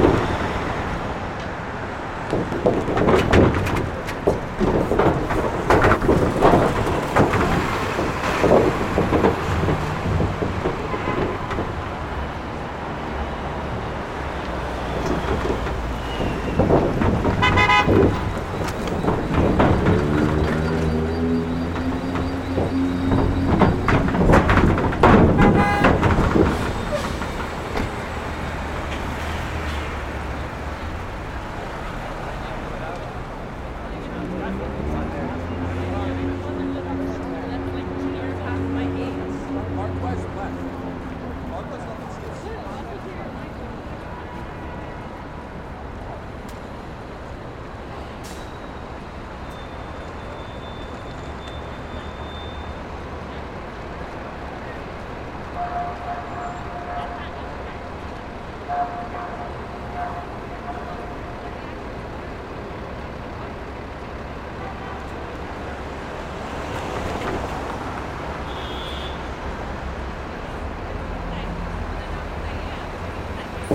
W 42nd St, New York, NY, USA - Traffic noise next to the New York Public Library
Traffic rolling over large construction steel plates next to the New York Public Library.
United States, 1 April 2022, 17:55